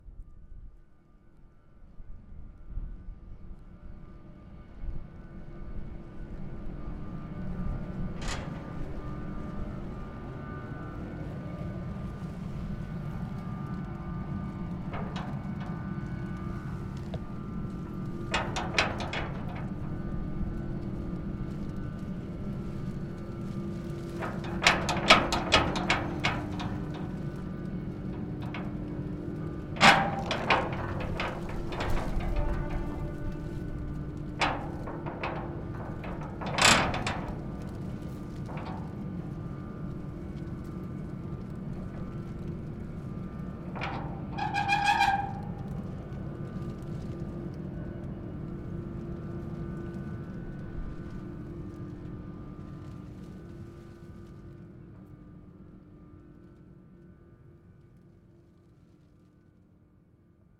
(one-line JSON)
{"title": "Saint-Nazaire, France - Le vent s'emportera...", "date": "2015-09-22 19:59:00", "description": "Le vent s'engouffre dans l'allée entre deux usines. Comme un intrus, il tente de passer un portail en fer, sans parvenir à l'ouvrir.", "latitude": "47.28", "longitude": "-2.20", "altitude": "4", "timezone": "Europe/Paris"}